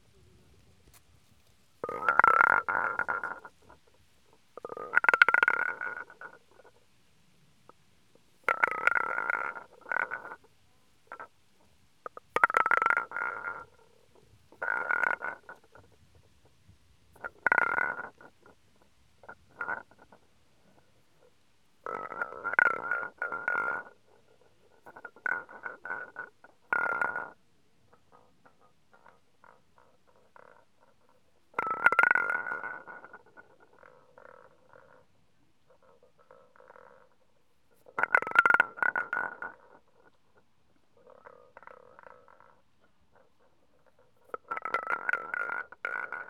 Berlin, Gardens of the World, entrance to Chinese garden - stone tongues
there are two lion statues at the entrance to the Chinese garden. they have heavy concrete, loose balls in their mouths that one can move about. these is the sound of the stone, round tongue rolling the the lions mouth. the other tongue can be heard in the background.